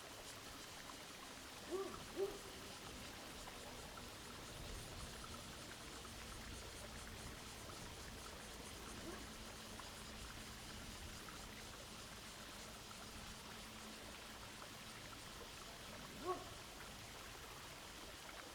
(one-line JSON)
{"title": "東河村, Donghe Township - streams and Cicadas", "date": "2014-09-06 17:09:00", "description": "The sound of water streams, Cicadas sound\nZoom H2n MS +XY", "latitude": "22.96", "longitude": "121.29", "altitude": "44", "timezone": "Asia/Taipei"}